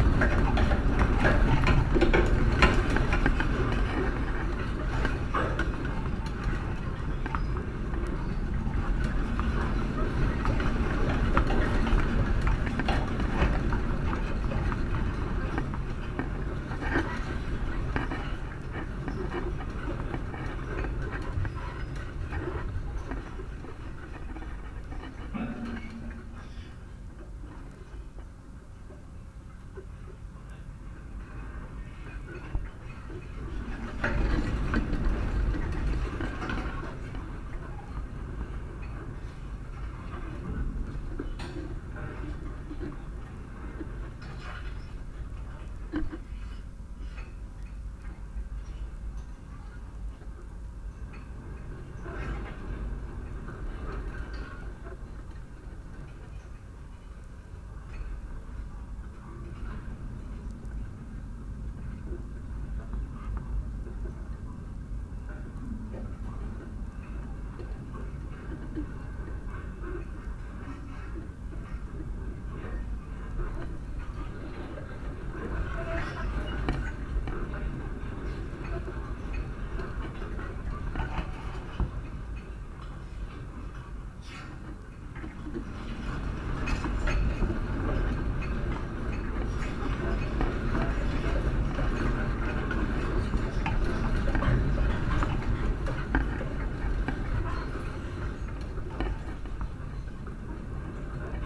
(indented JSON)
{
  "title": "Van Buren Trail, South Haven, Michigan, USA - Van Buren Trail Fence",
  "date": "2022-07-23 15:13:00",
  "description": "Contact mic recording from fence along Van Buren Trail",
  "latitude": "42.39",
  "longitude": "-86.28",
  "altitude": "191",
  "timezone": "America/Detroit"
}